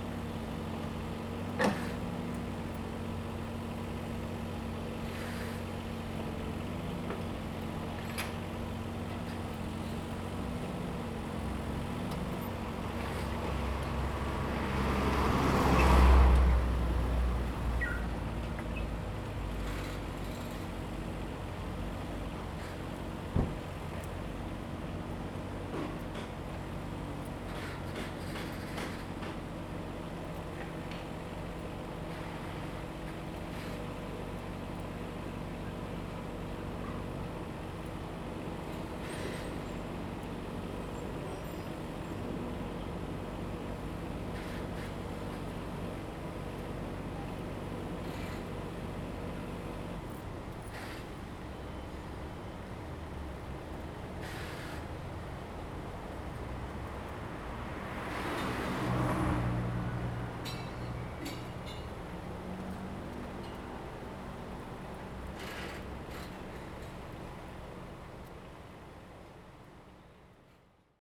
Cihui 1st St., Ji'an Township - At the roadside
At the roadside, Traffic Sound, Sound of construction
Zoom H2n MS+XY